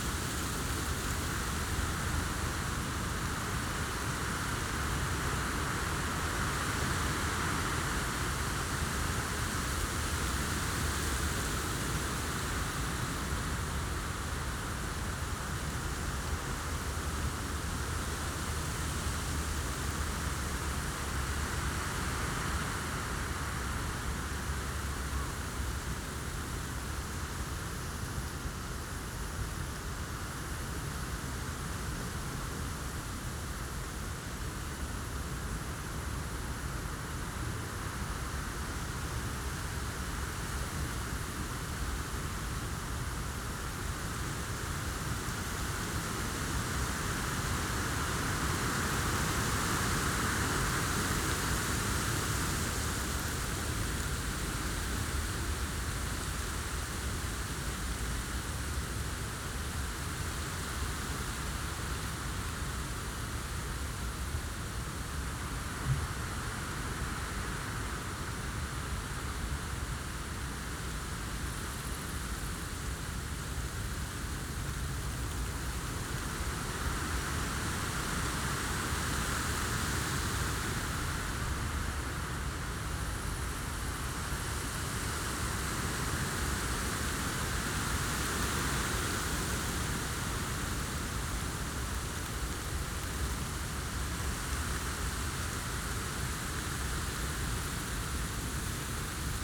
Tempelhofer Feld, Berlin, Deutschland - summer evening wind
nice and sometimes strong summer evening breeze
(Sony PCM D50, DPA4060)
Berlin, Germany, July 2014